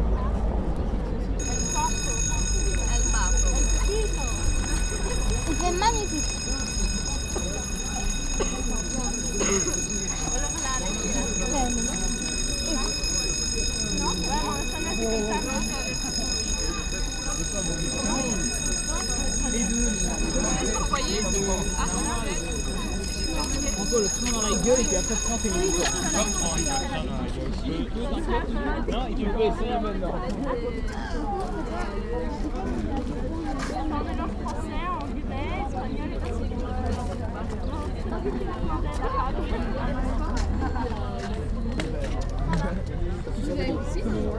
Students waiting for the train in the small town of Court-St-Etienne, a friday evening.
Court-St.-Étienne, Belgium